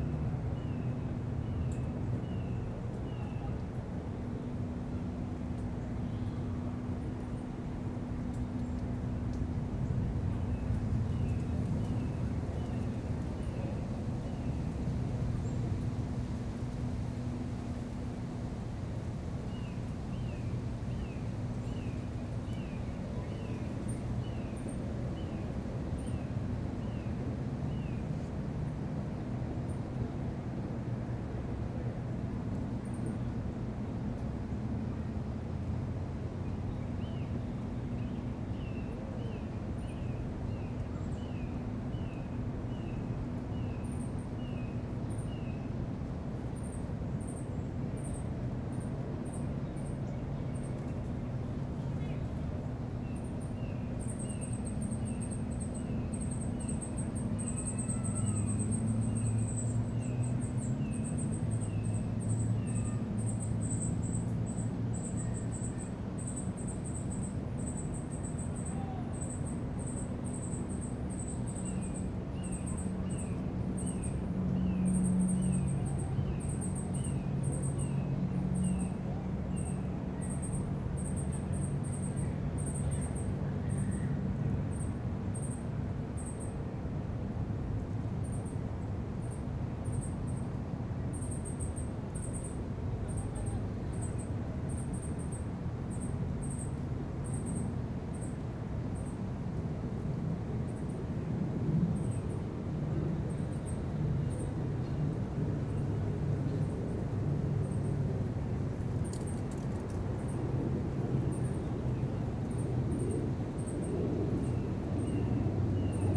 A recording taken from a small wooden platform with a bench that overlooks the Chattahoochee. The water is so still that it's completely inaudible. Traffic from the nearby road is heard, as is the human activity emanating from riverside park. Some wildlife also made it into the recording, including a cardinal that nearly clipped my preamps with its chirp.
[Tascam Dr-100mkiii w/ Primo EM-272 omni mics, 120hz low cut engaged]
28 June, ~16:00